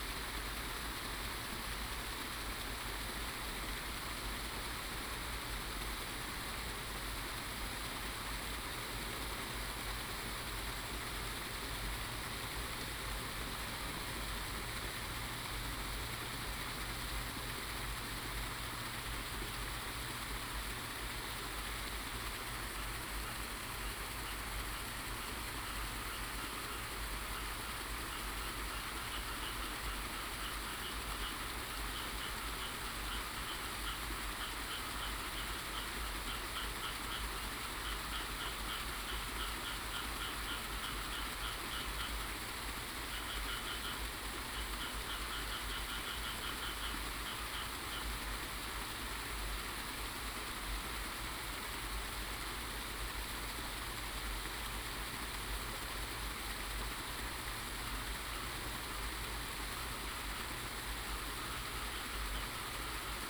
保生村, Fangliao Township, Pingtung County - Beside the fish pond
Late night on the street, Traffic sound, Beside the fish pond, Frog croak
Pingtung County, Taiwan